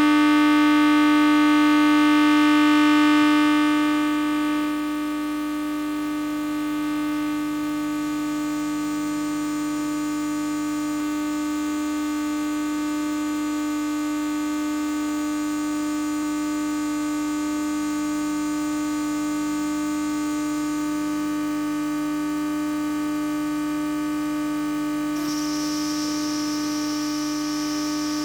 Ixelles, Belgium - Electromagnetic travel
Electromagnetic travel inside a train, recorded with a telephone coil pickup stick on the window. Train waiting in the Bruxelles-Luxembourg station, and going threw the Bruxelles-Schuman station.